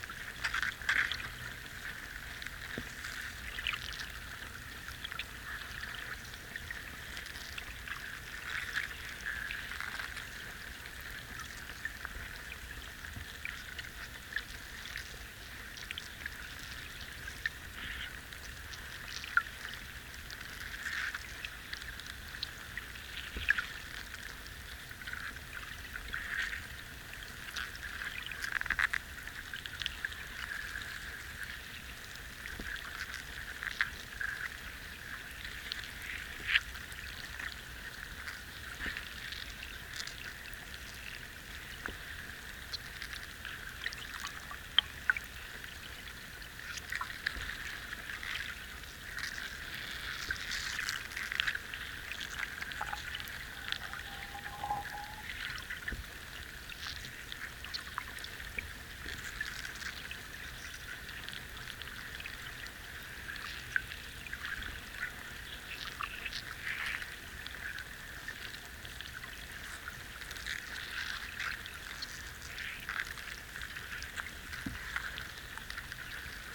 river flow listened through underwater microphones